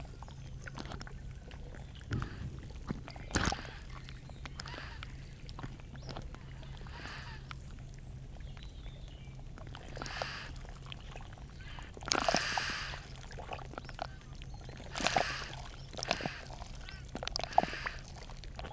Bernières-sur-Seine, France - Blowing bank
On the Seine bank, there's a little hole in the ground. With waves, curiously the hole is blowing. It's like it were alive.
21 September 2016